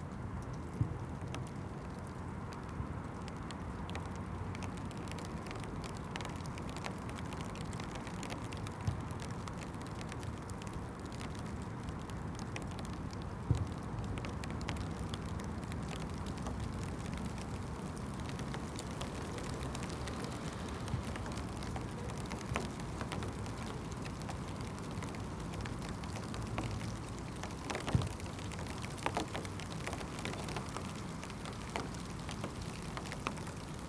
The Drive Westfield Drive Parker Avenue Brackenfield Road Salters Road
Sleet driven on the wind
through the treetops
Father and son
kick a yellow football
back and forth
it skids through puddles
Two mistle thrushes move off
England, United Kingdom, 7 February 2021